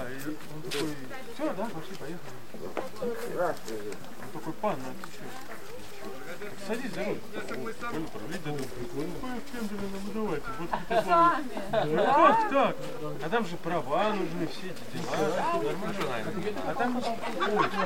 Rubikiai, Lithuania, russian tourists
drunken roussian tourists at the lake